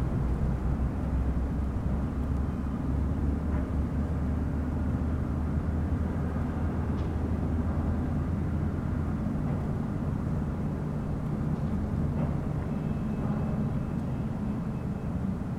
Fort Calgary Park ambience
city sounds heard in Fort Calgary Park
Alberta, Canada